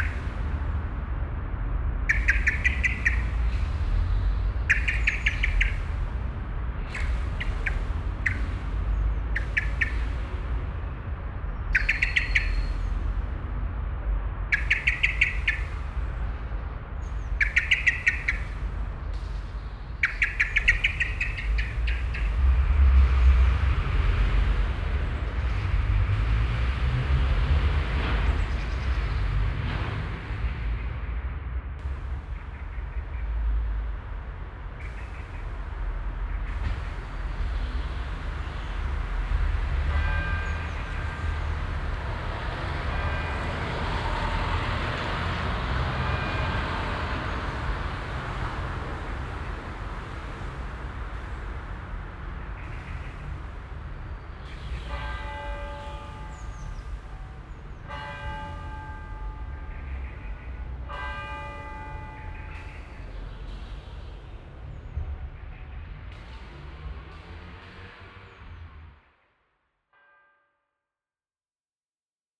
Südostviertel, Essen, Deutschland - essen, blackbird, traffic and bells
An der Auferstehungskirche. Eine Amsel kommt aus dem Gebüsch und schirpt. Verkehr passiert die Strasse. Die Stundenglocke der Kirche.
At The Auferstehungs Church. A blackbirb coming out of a bush chirps constantly. The passing street traffic. The hour bell of the church.
Projekt - Stadtklang//: Hörorte - topographic field recordings and social ambiences
Essen, Germany